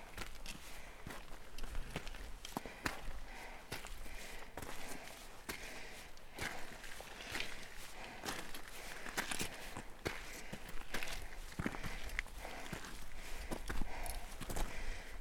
Aufstieg zum Restipass 2627 Meter
Aufstieg, eigenartige Steinformatonen, Einschlüsse von Quarz, sehr hoch alles, Wetter geeignet, gute Sicht, Archaik pur
Ferden, Schweiz, 8 July, 13:28